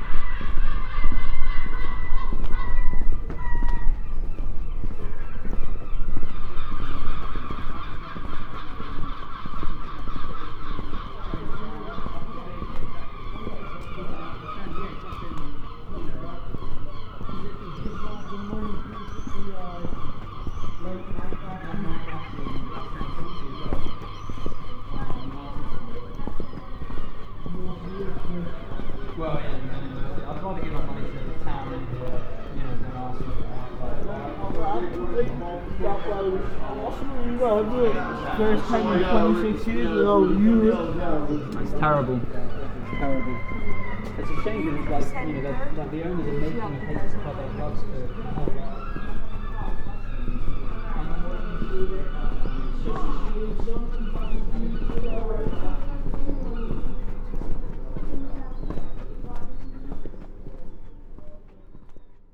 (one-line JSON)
{"title": "Night Walk, Aldeburgh, Suffolk, UK - Walk", "date": "2021-07-08 22:21:00", "description": "Beginning and ending near The White Hart pub this walk at 10pm records the end of a sunny day in a town now quiet. Some voices, snatches of a football game on TV through open windows, gulls and the occasional car.", "latitude": "52.15", "longitude": "1.60", "altitude": "9", "timezone": "Europe/London"}